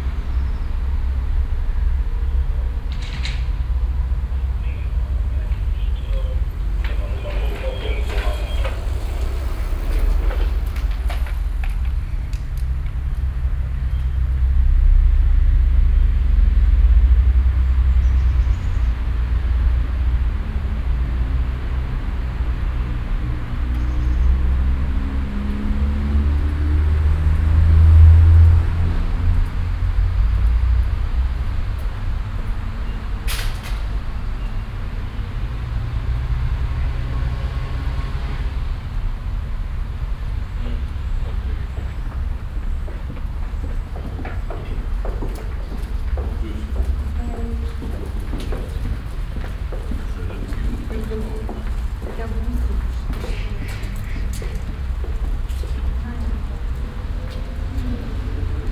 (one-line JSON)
{"title": "Düsseldorf, Hofgarten, Jan Wellem Passage", "date": "2008-08-21 09:17:00", "description": "In der Passage, das Hereinrollen eines Radkuriers mit Walkie Talkie, Verkehr und Schritte\nsoundmap nrw: social ambiences/ listen to the people - in & outdoor nearfield recordings", "latitude": "51.23", "longitude": "6.78", "altitude": "41", "timezone": "Europe/Berlin"}